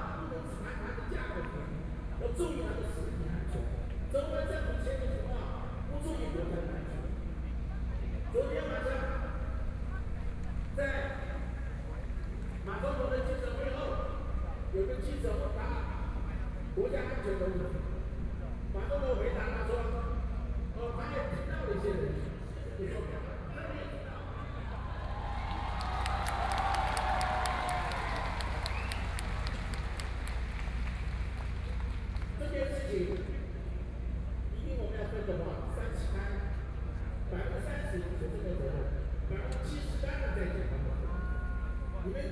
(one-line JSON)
{"title": "Ketagalan Boulevard - Speech", "date": "2014-03-30 18:45:00", "description": "Former national policy adviser, the site in protest, People cheering, Nearby streets are packed with all the people participating in the protest, The number of people participating in protests over Half a million\nBinaural recordings, Sony PCM D100 + Soundman OKM II", "latitude": "25.04", "longitude": "121.52", "altitude": "13", "timezone": "Asia/Taipei"}